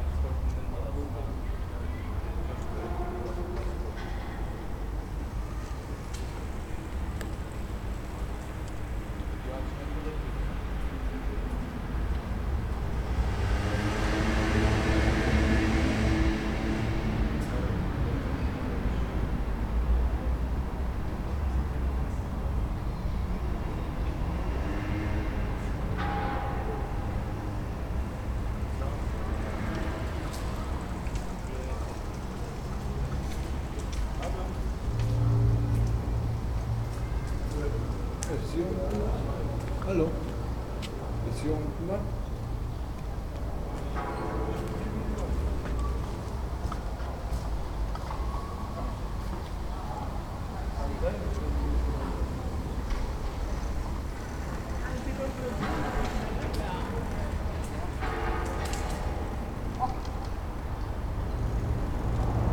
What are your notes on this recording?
microphone on the grate, pointing downwards, echo and reflections of street sounds, also catching sounds from below the ground, later wind and church bells, and two women came and told me about a sound art concert in the underground later. coincidences.